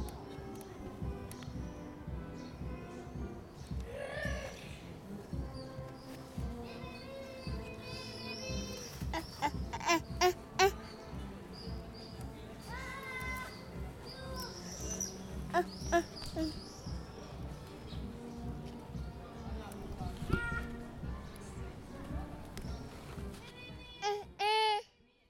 проспект Ломоносова, Костянтинівка, Донецька область, Украина - Лепет ребенка на фоне игры оркестра
Звуки играющего духового оркестра и лепет ребенка
Donetska oblast, Ukraine, 9 June 2019, 16:28